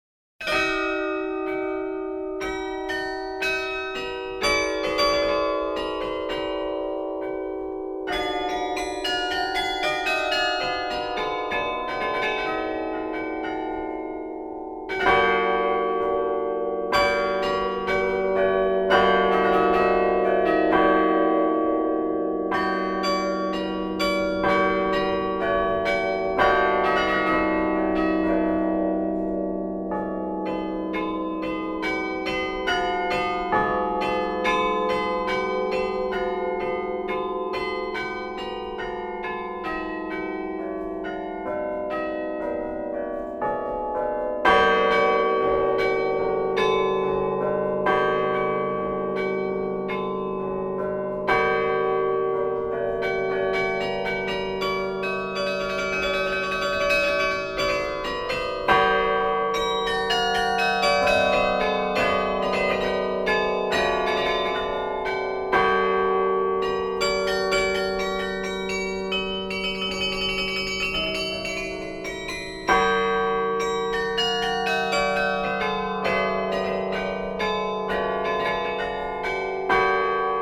Namur, Belgique - Namur carillon
Recording of the Namur carillon inside the tower, on the evening of the terrible tempest, 2010, july 14. The player is Thierry Bouillet.